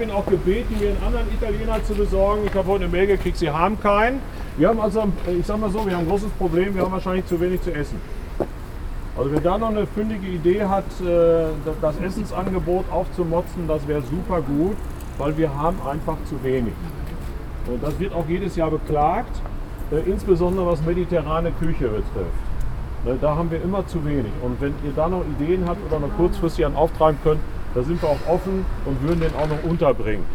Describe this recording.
We are joining here a guided tour through the city’s art and artists’ quartiers, the “Martin-Luther Viertel” in Hamm. Chairman Werner Reumke leads members of the area’s support associations (“Förderverein des Martin-Luther-Viertels”) through the neighborhood. Only two weeks to go till the big annual Arts-Festival “La Fete”… Wir folgen hier einer ausserordentlichen Stadtführung durch das Martin-Luther-Viertel, das Kunst und Künstlerviertel der Stadt. Werner Reumke, Vorsitzender des Fördervereins begeht das Quatier zusammen mit Vereinsmitgliedern. Nur noch zwei Wochen bis zum grossen jährlichen Kunst- und Kulturfest “La Fete”… recordings are archived at: